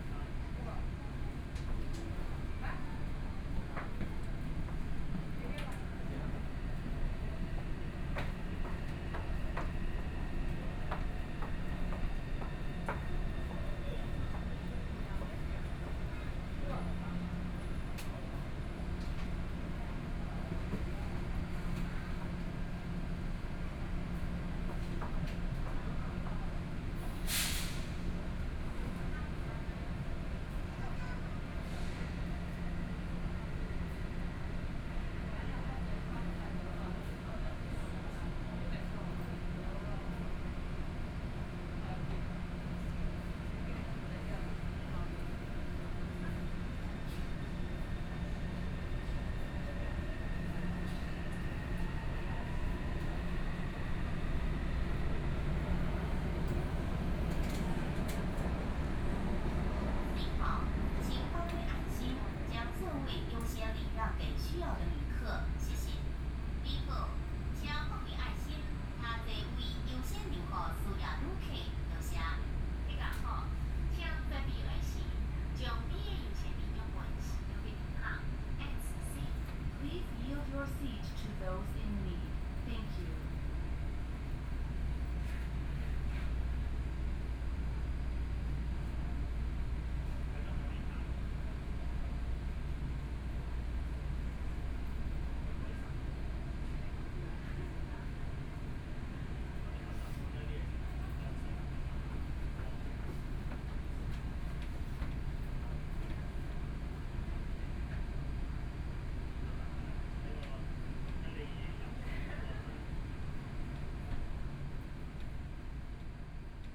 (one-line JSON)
{"title": "Beitou Station, Taipei - in the Station", "date": "2014-02-06 12:20:00", "description": "Walking in the Station, Binaural recordings, Zoom H4n + Soundman OKM II", "latitude": "25.13", "longitude": "121.50", "timezone": "Asia/Taipei"}